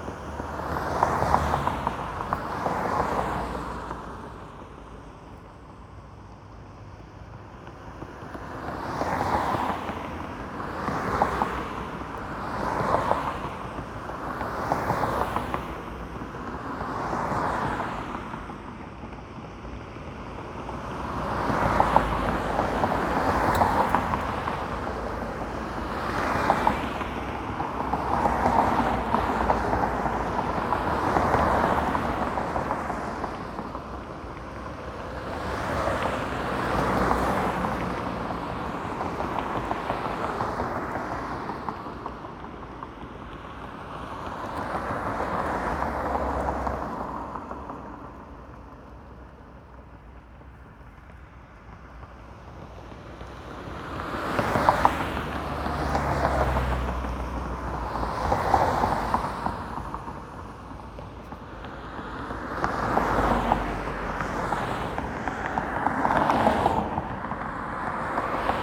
Wheels clacking on joints between concrete road slabs
The road here is made of large concrete slabs with gaps between
Berlin, Germany, 1 November 2011